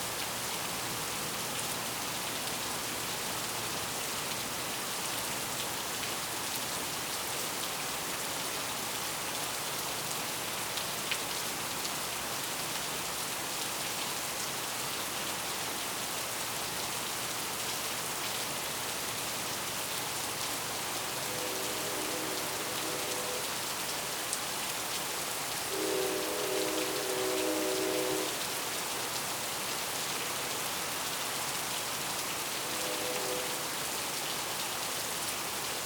E Coll St, New Braunfels, TX, Verenigde Staten - thunder and trains in New Braunfels, Texas
thunder and trains in New Braunfels, Texas